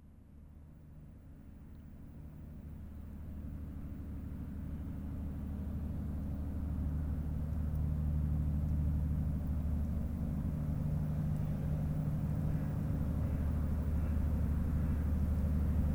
Notre-Dame-de-Bliquetuit, France - Boat
A boat is passing by on the Seine river, it's an industrial boat, the Duncan.
17 September, 08:00